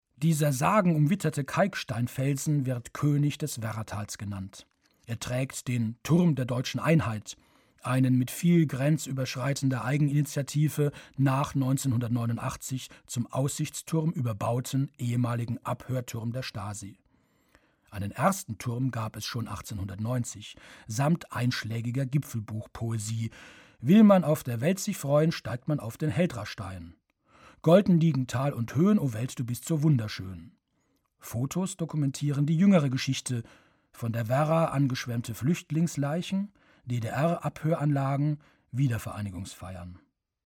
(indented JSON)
{
  "title": "auf dem heldrastein",
  "date": "2009-08-16 22:18:00",
  "description": "Produktion: Deutschlandradio Kultur/Norddeutscher Rundfunk 2009",
  "latitude": "51.11",
  "longitude": "10.19",
  "altitude": "501",
  "timezone": "Europe/Berlin"
}